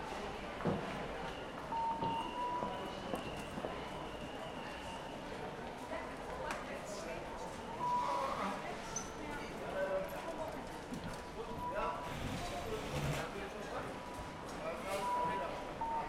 {"title": "Hoog-Catharijne CS en Leidseveer, Utrecht, Niederlande - rfid ticket beep", "date": "2012-05-07 14:23:00", "description": "in a passage between hoog-catharijne and the main statiion people register with their ticekts", "latitude": "52.09", "longitude": "5.11", "altitude": "10", "timezone": "Europe/Amsterdam"}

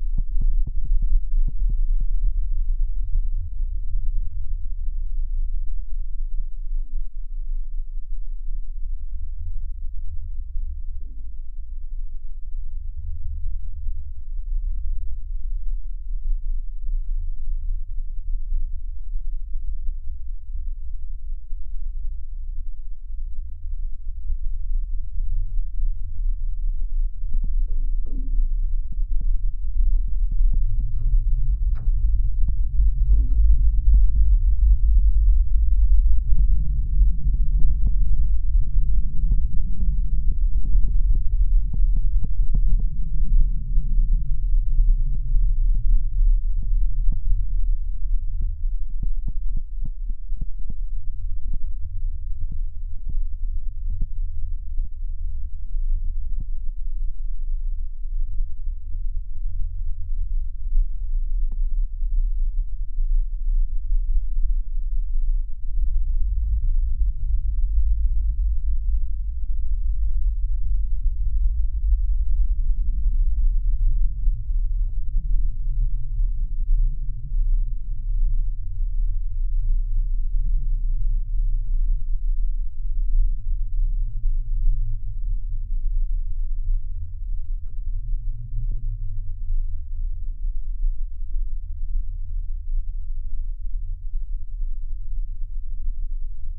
{"title": "Mikieriai, Lithuania, hanging bridge", "date": "2020-03-17 16:40:00", "description": "Low frequencies: use good headphones or speakers. Recorded with contact microphones and geophone on support wires of hanging bridge.", "latitude": "55.66", "longitude": "25.18", "altitude": "82", "timezone": "Europe/Vilnius"}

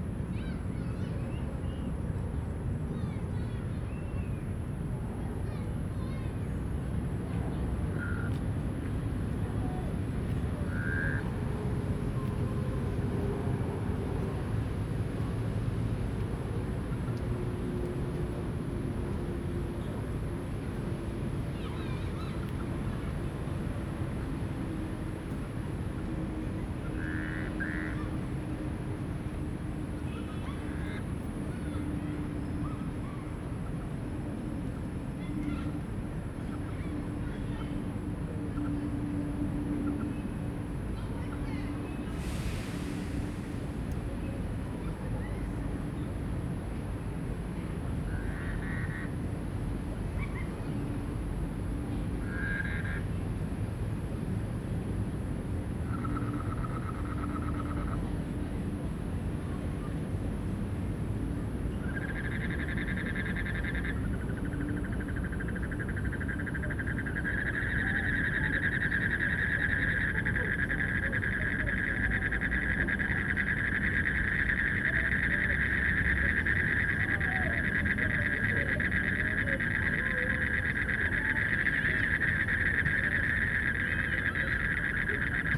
{"title": "大安森林公園, 大安區, Taipei City - Frogs chirping", "date": "2015-06-26 22:20:00", "description": "Small ecological pool, Frogs chirping, in the Park, Traffic noise\nZoom H2n MS+XY", "latitude": "25.03", "longitude": "121.54", "altitude": "20", "timezone": "Asia/Taipei"}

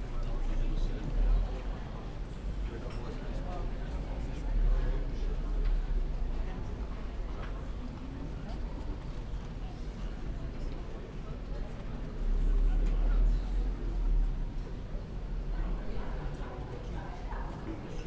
{"title": "Spittelwiese/Landstraße, Linz - outside cafe ambience", "date": "2020-09-08 13:15:00", "description": "at a cafe near the main road Landstrasse, people, trams, cars, outside cafe ambience\n(Sony PCM D50, Primo EM172)", "latitude": "48.30", "longitude": "14.29", "altitude": "271", "timezone": "Europe/Vienna"}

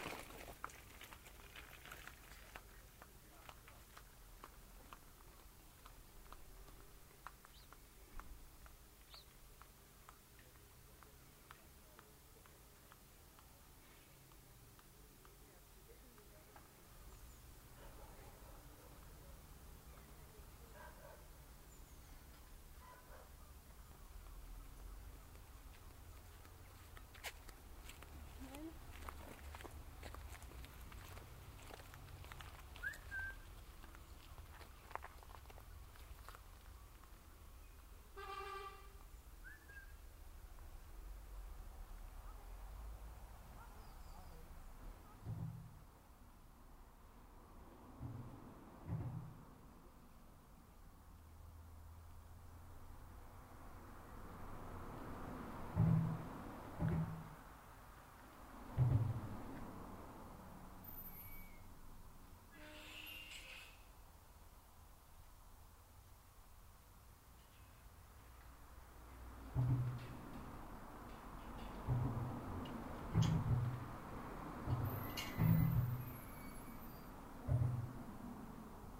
{"title": "Bridport, Dorset, UK - church bells", "date": "2013-07-18 12:00:00", "description": "soundwalk with binaurals from south street, through St Mary's church, down towpath towards West Bay including weir and waterwheel at the Brewery and finally the A35 underpass.", "latitude": "50.73", "longitude": "-2.76", "altitude": "6", "timezone": "Europe/London"}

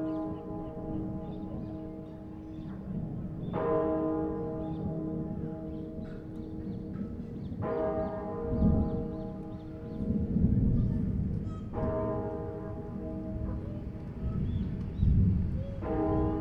{"title": "Rue de la Capitale, Marseille, France - Marseille - quartier du Roucas-Blanc - Festivité du 15 Août.", "date": "2022-08-14 20:30:00", "description": "Marseille - quartier du Roucas-Blanc\nFestivité du 15 Août.\nLa procession arrive à la bonne mère.\nLe son du bourdon résonne sur la ville pendant que l'orage gronde.\nPrise de son : JF CAVRO\nZoom F3 + Neuman KM 184", "latitude": "43.28", "longitude": "5.37", "altitude": "74", "timezone": "Europe/Paris"}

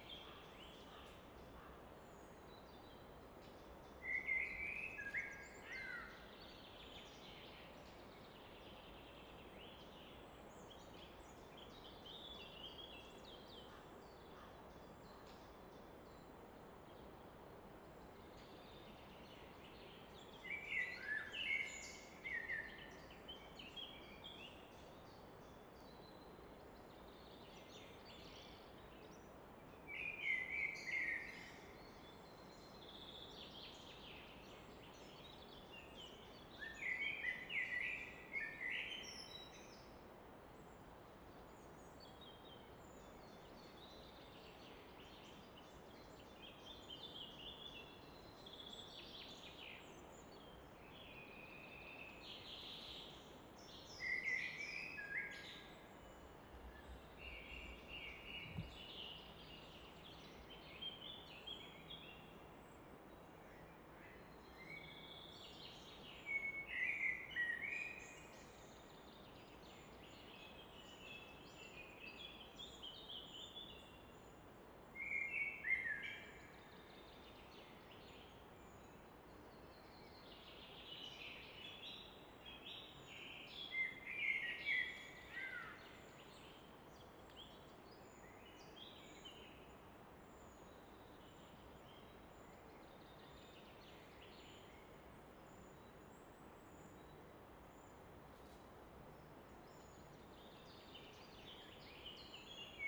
{"title": "Fläsch, Schweiz - Abendstimmung Nachtigall", "date": "2004-06-22 19:44:00", "description": "Im Hintergrund wird das Rauschen des Rheins von einer Felswand reflektiert.", "latitude": "47.04", "longitude": "9.49", "altitude": "497", "timezone": "Europe/Zurich"}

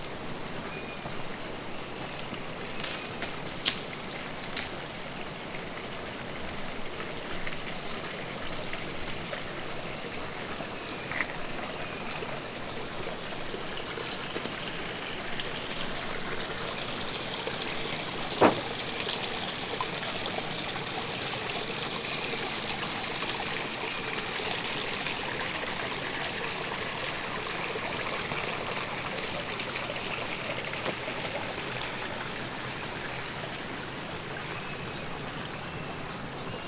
{"title": "Steinbachtal crossing 2 bridges, WLD", "date": "2011-07-18 11:35:00", "description": "Steinbachtal, walking slowly through the dell, crossing 2 small wooden bridges over the brook, WLD", "latitude": "51.39", "longitude": "9.63", "altitude": "232", "timezone": "Europe/Berlin"}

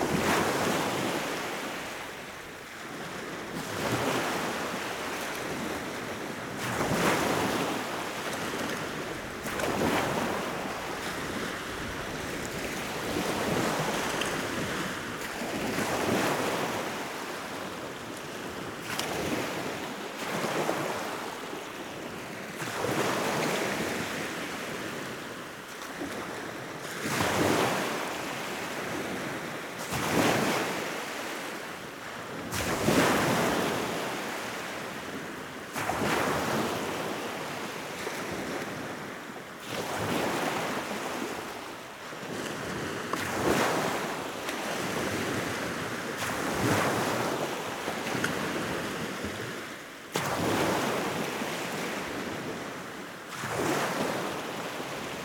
{"title": "Nõva beach at sunrise", "date": "2010-06-17 04:40:00", "description": "Waves, beach, sunrise", "latitude": "59.22", "longitude": "23.60", "altitude": "2", "timezone": "Europe/Tallinn"}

